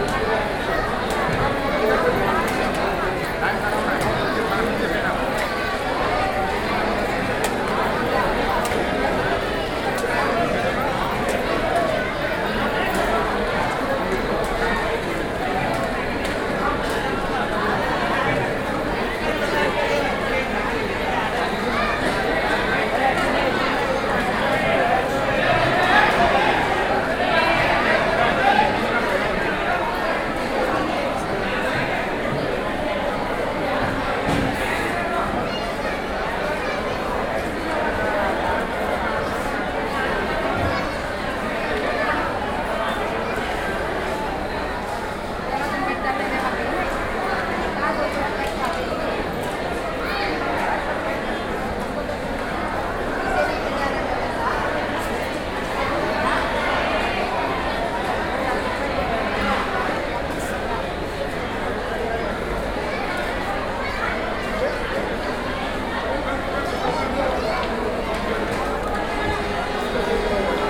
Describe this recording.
India, Maharashtra, Mumbai, Dadar, Railway station, hall, queue, crowd